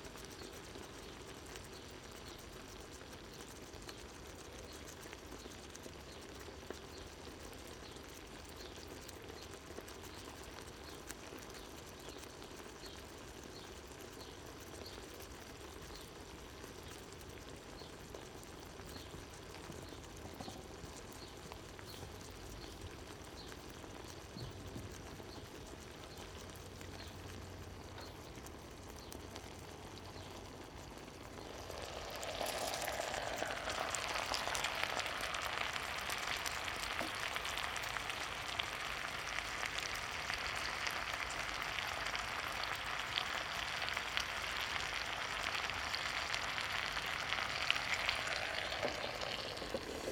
Chemin des Sablons, La Rochelle, France - Tagine cooking in the garden
Tagine cooking in the garden
ORTF DPA 4022 + Rycotte + Mix 2000 AETA + edirol R4Pro
Nouvelle-Aquitaine, France métropolitaine, France, 17 April, ~13:00